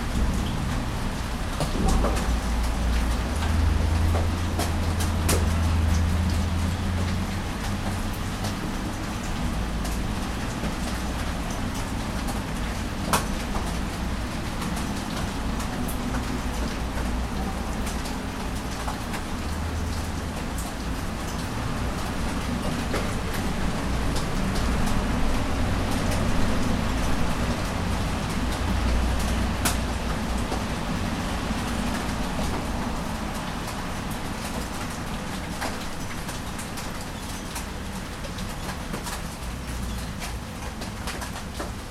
Morning Rain - Arbour Hill, Dublin - Morning Rain
Morning summer rain recorded through a window opening onto small yard - July 2012, for World Listening Day
Dublin, Co. Dublin, Ireland